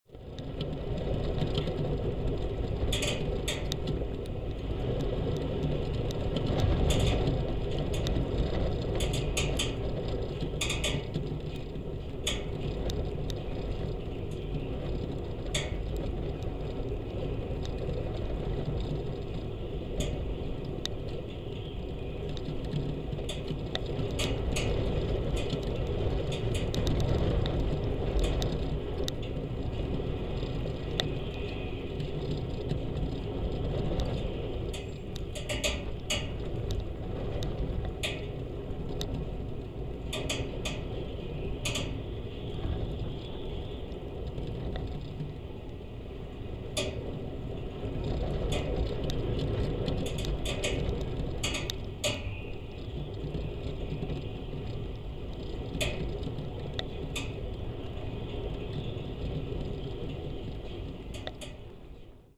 Dunkerque, Francia - Paroi metallique dans le vent
Contact microphone, paroi métallique dans le vent du nord
France métropolitaine, European Union, 1 April 2013, ~11:00